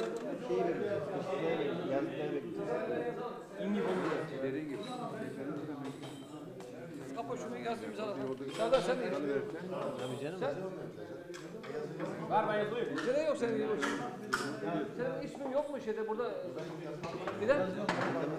the coffee shop / kahvehane in a small village, the men are chatting and enjoying their tea